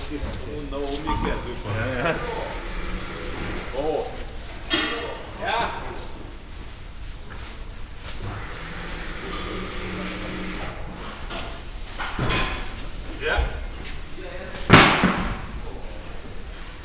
bensberg, rathenaustrasse, wheel change
In a reverbing construction hall at a mechanic who provides car wheel changes. The sounds of tools and pneumatic pressure and air release as the mechanic change wheels on several cars simultaneously. Also the sound of a car starting its engine inside the hall and the mechanics talking.
soundmap nrw - social ambiences and topographic field recordings